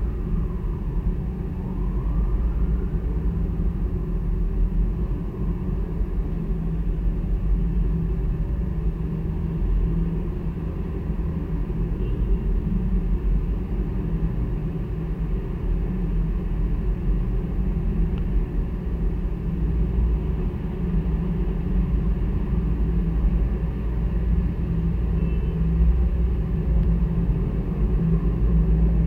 Kelmė, Lithuania, rain pipe
contact microphones on rain pipe of evangelic church